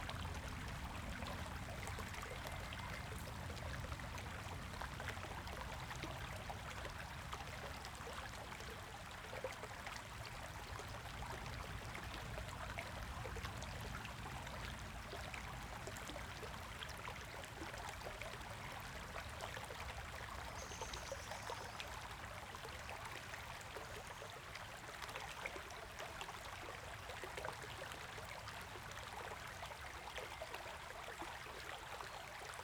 {
  "title": "種瓜坑溪, 埔里鎮成功里, Nantou County - Stream sound",
  "date": "2016-05-20 15:19:00",
  "description": "Stream\nZoom H6 XY",
  "latitude": "23.96",
  "longitude": "120.89",
  "altitude": "454",
  "timezone": "Asia/Taipei"
}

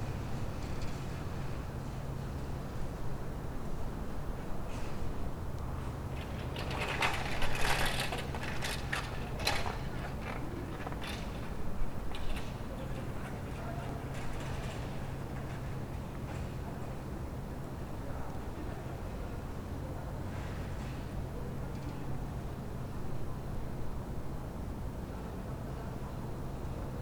{
  "title": "Berlin: Vermessungspunkt Friedel- / Pflügerstraße - Klangvermessung Kreuzkölln ::: 12.01.2012 ::: 01:28",
  "date": "2012-01-12 01:28:00",
  "latitude": "52.49",
  "longitude": "13.43",
  "altitude": "40",
  "timezone": "Europe/Berlin"
}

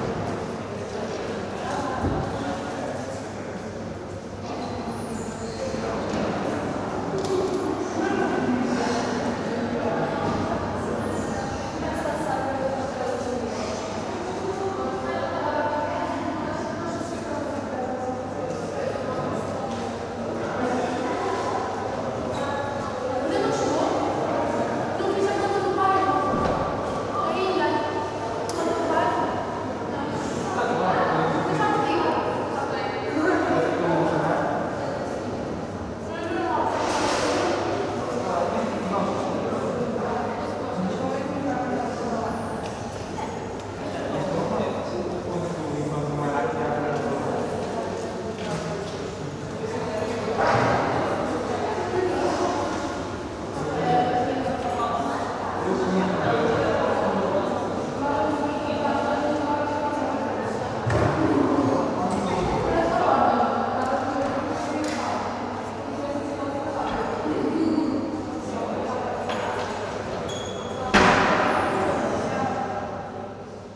{
  "title": "Faculdade De Belas Artes, foyer Porto, Portugal - FBAUP foyer ambience",
  "date": "2013-04-10 14:20:00",
  "description": "student activity in the foyer of the Fine Arts School of Porto",
  "latitude": "41.14",
  "longitude": "-8.60",
  "altitude": "99",
  "timezone": "Europe/Lisbon"
}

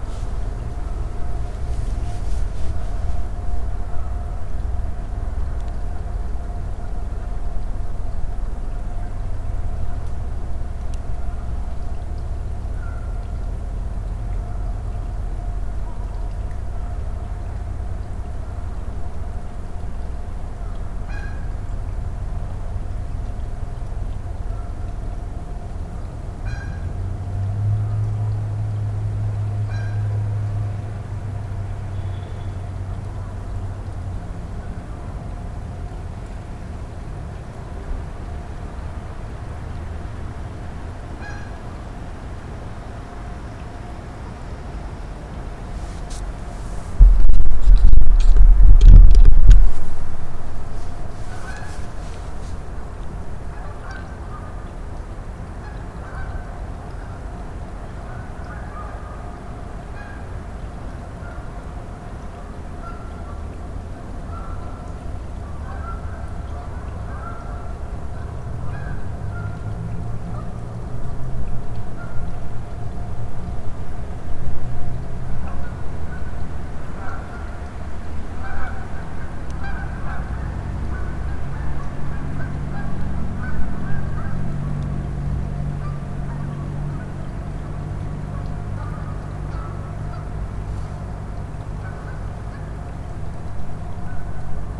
{
  "title": "The College of New Jersey, Pennington Road, Ewing Township, NJ, USA - Sylvia Lake",
  "date": "2014-03-17 21:25:00",
  "description": "Recorded using Audio-Technica USB Microphone.",
  "latitude": "40.27",
  "longitude": "-74.78",
  "timezone": "America/New_York"
}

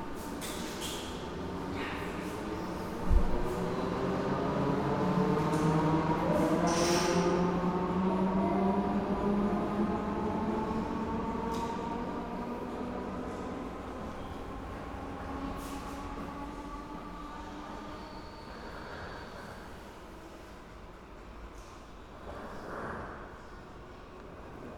{
  "date": "2011-07-10 16:51:00",
  "description": "waiting for the train. me and my daughter",
  "latitude": "52.39",
  "longitude": "4.84",
  "altitude": "4",
  "timezone": "Europe/Amsterdam"
}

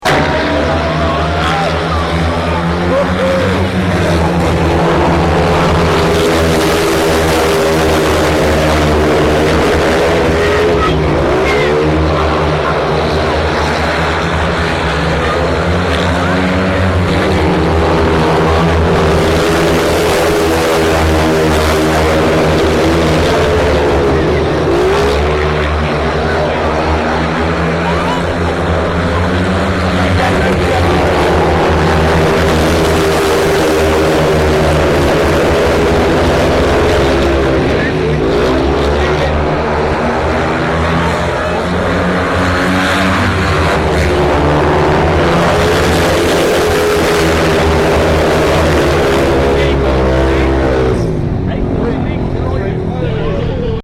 {
  "title": "speedway track",
  "description": "speedway race at wildcats stadium",
  "latitude": "50.62",
  "longitude": "-2.49",
  "altitude": "11",
  "timezone": "Europe/Berlin"
}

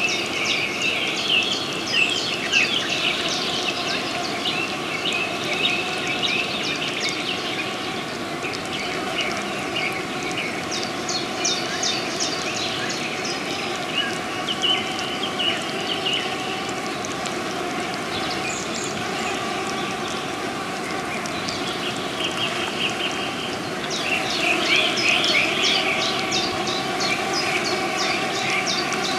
{
  "title": "Flooded Quarry",
  "date": "2010-07-13 12:01:00",
  "description": "Flooded, quarry, quartz mine, disused, flies, idyll, Background Listening Post",
  "latitude": "51.59",
  "longitude": "11.95",
  "timezone": "Europe/Berlin"
}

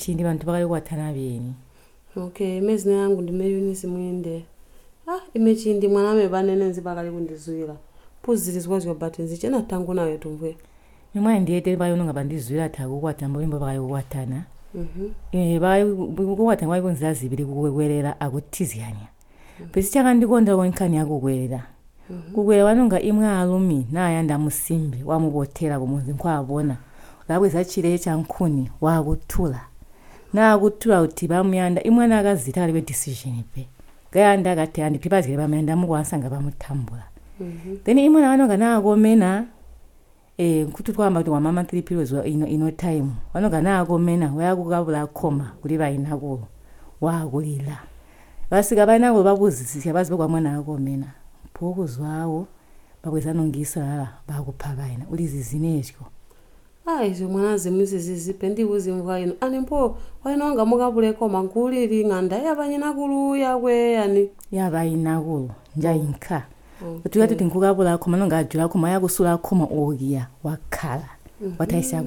{
  "title": "Tusimpe Pastoral Centre, Binga, Zimbabwe - what Banene told me...",
  "date": "2016-07-06 12:14:00",
  "description": "...during the second day of our workshop, we talked at length about the culture among the Batonga and how it is passed on traditionally especially among women and children… in one of the one-to-one training sessions Lucia and Eunice record this beautiful conversation exchanging about what they learnt from their grandmothers…\na recording made during the one-to-one training sessions of a workshop on documentation skills convened by Zubo Trust; Zubo Trust is a women’s organization bringing women together for self-empowerment.",
  "latitude": "-17.63",
  "longitude": "27.33",
  "altitude": "605",
  "timezone": "GMT+1"
}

